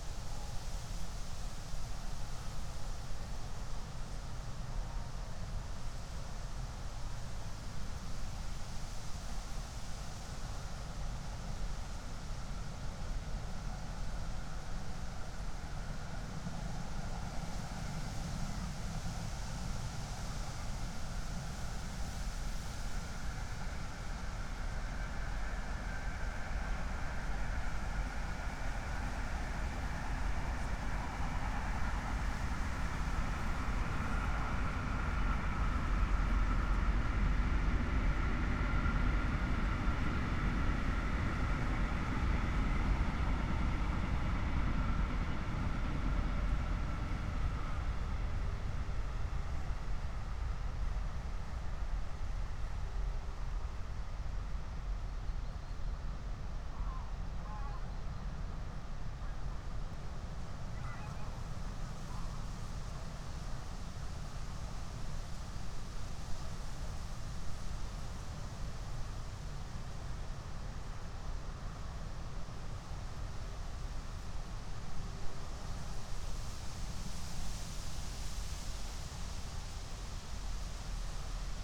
13:48 Berlin, Buch, Moorlinse - pond, wetland ambience
Deutschland, 6 November 2021